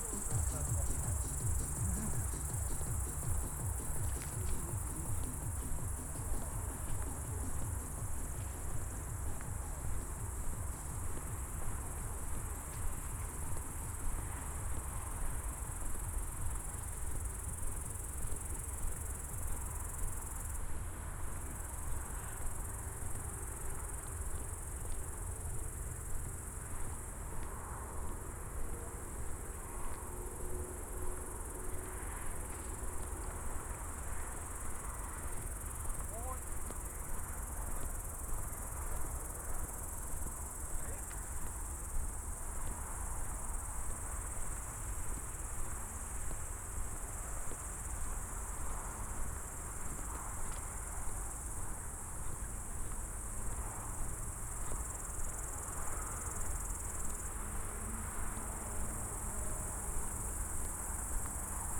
Karow, Buch, Berlin - walking to Karow, crickets, train, Autobahn, soundsystem
night walk from Berlin Buch Moorlinse to Karow, listening to intense cricket sounds, a S-Bahn train, passing the Autobahn bridge, violent traffic noise, then crickets again, later Italian tree crickets with its low-pitched sounds, then 3 youngsters w/ a boom box, hanging out under a bridge in the dark
(Sony PCM D50, Primo EM172)
12 August, ~22:00